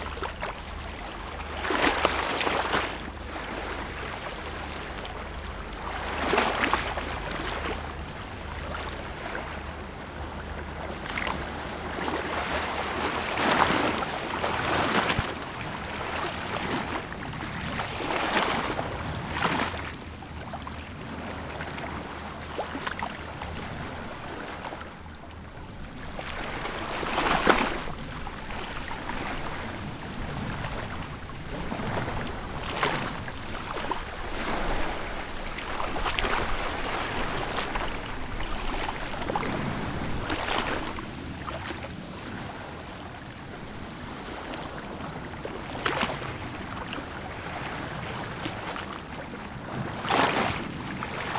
Soundscape by the sea in an area with a kind of ravine. The wind blows a little and hear the sea moved.
Paisatge sonor a la vora del mar en una zona amb una espècie de canyigueral. El vent bufa una mica i el mar d'escolta alterat.
Paisaje sonoro al lado del mar en una zona con una especie de cañada. El viento sopla un poco y el mar se escucha movido.
Sigtuna, Sweden, 12 August, 14:00